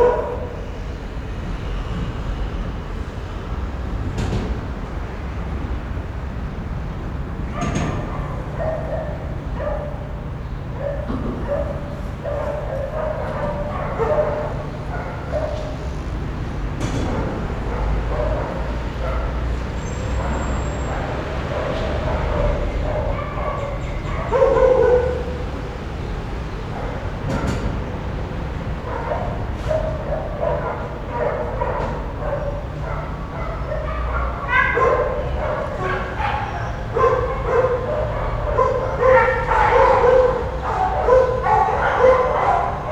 成蘆大橋, Luzhou Dist., New Taipei City - Under the bridge
New Taipei City, Taiwan